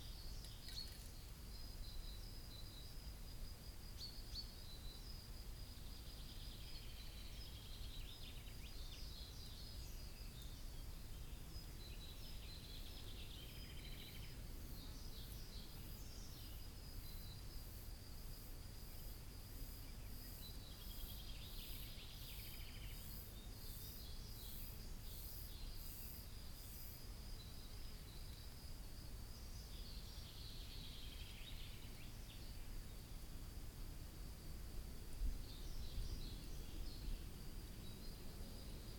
Nationale Park Hoge Veluwe, Netherlands - Schaapskooiveld
2x PZM microphones. Birds, Crickets, Bees.